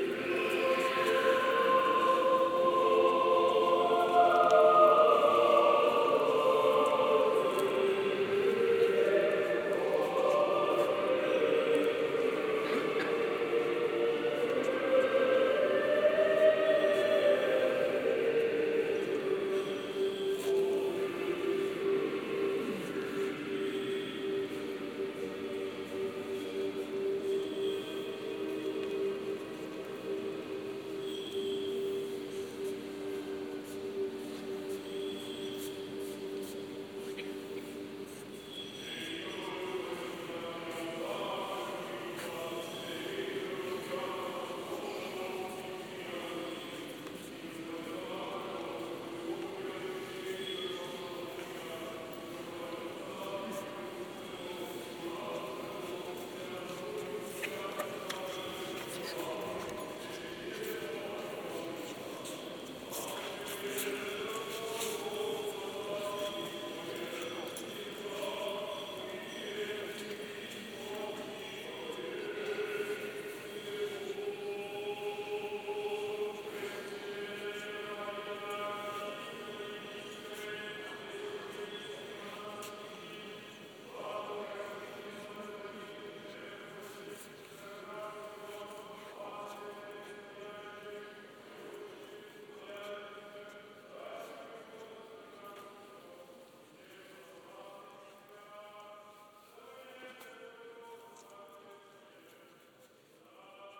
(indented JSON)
{
  "title": "Исаакиевская пл., Санкт-Петербург, Россия - Christmas in St. Isaacs Cathedral",
  "date": "2019-01-07 00:25:00",
  "description": "Christmas in St. Isaac's Cathedral",
  "latitude": "59.93",
  "longitude": "30.31",
  "altitude": "17",
  "timezone": "GMT+1"
}